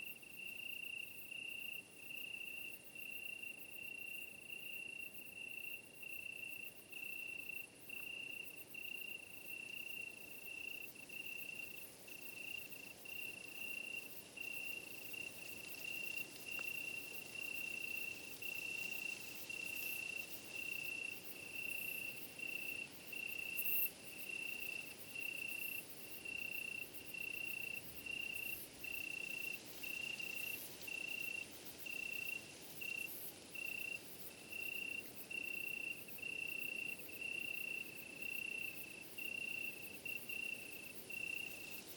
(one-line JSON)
{"title": "La Molière, Saint-Jean-du-Gard, France - Quiet Night in the Cevennes National Park - part 2", "date": "2020-07-16 01:00:00", "description": "Quiet night and crickets at Saint Jean du Gard in the Cevennes National Park.\nSet Up: Tascam DR100MK3/ Lom Usi Pro mics in ORTF.", "latitude": "44.12", "longitude": "3.88", "altitude": "325", "timezone": "Europe/Paris"}